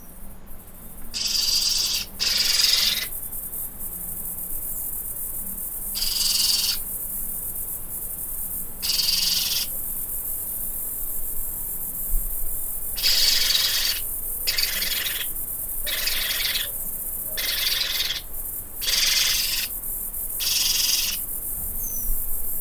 Tangará, Rio Acima - MG, 34300-000, Brasil - Baby pionus (parrots) screaming
2 baby pionus (parrots) screaming on the roof in the interior of Minas Gerais, Brazil.
Twin sound: neighing horse
Recorded by a MS Setup Schoeps CCM41+CCM8
in a Cinela Windscreen Pianissimo
on a MixPre-6 – Sound Devices Recorder
Minas Gerais, Região Sudeste, Brasil, 17 February 2021